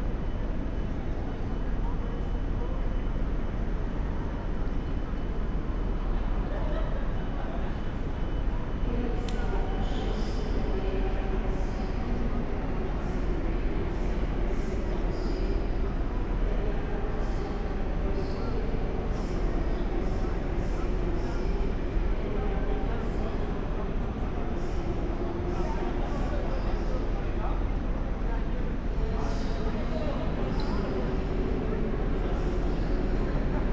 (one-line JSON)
{"title": "Hamburg Hauptbahnhof - central station walk", "date": "2019-01-26 19:30:00", "description": "Hamburg Hauptbahnhof, main station, walking from the upper level down to the platform\n(Sony PCM D50, Primo EM172)", "latitude": "53.55", "longitude": "10.01", "altitude": "14", "timezone": "Europe/Berlin"}